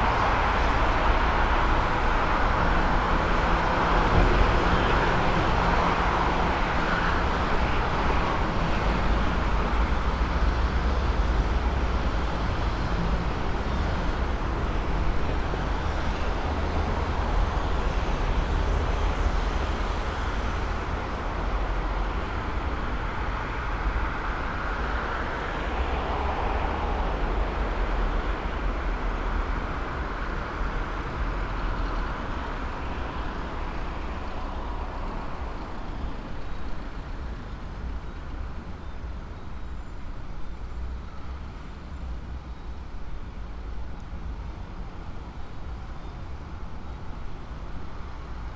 Kaunas, Lithuania, morning town
microphones in the open window of hotel. morning in the town
Kauno miesto savivaldybė, Kauno apskritis, Lietuva, 19 August, 08:00